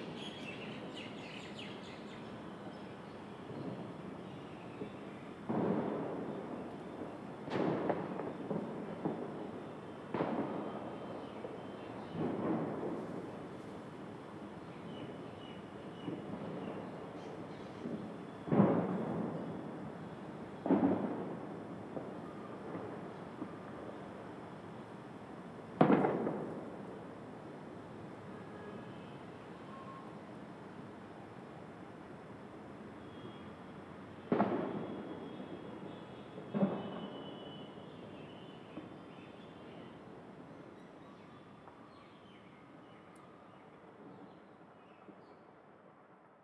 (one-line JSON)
{"title": "Rao Tularam Marg, West End Colony, Block C, Vasant Vihar, New Delhi, Delhi, India - 16 Concrete Fall", "date": "2016-02-18 10:13:00", "description": "Recording of a distant motorway construction sounds.", "latitude": "28.57", "longitude": "77.16", "altitude": "243", "timezone": "Asia/Kolkata"}